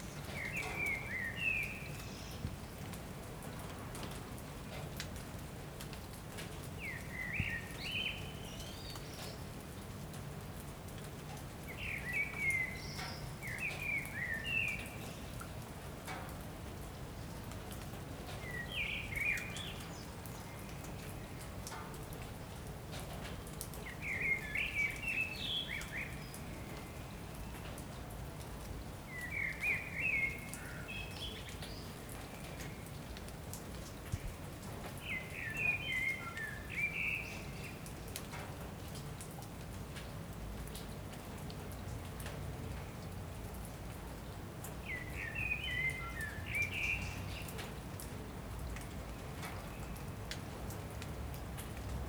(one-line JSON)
{"title": "rue de Belleville, Paris, France (J-Y Leloup) - Sparse rain & songbird solo in a Paris backyard", "date": "2014-06-30 19:01:00", "description": "The rain ends at the end of the day, around 6PM during summer 2014, and a bird starts to sing, in a peaceful ambiance in an upper Belleville backyard in Paris. Raindrops keep falling on metal and glass. Distant chuch bell.", "latitude": "48.88", "longitude": "2.39", "altitude": "105", "timezone": "Europe/Paris"}